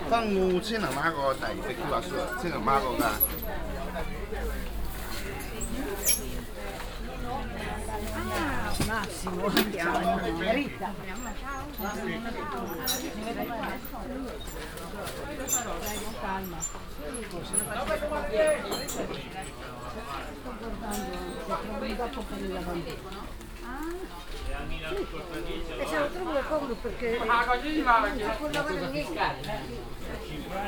alassio, via giovanni batista, weekly market
atmosphere on the weekly market in the morning time
soundmap international: social ambiences/ listen to the people in & outdoor topographic field recordings
25 July 2009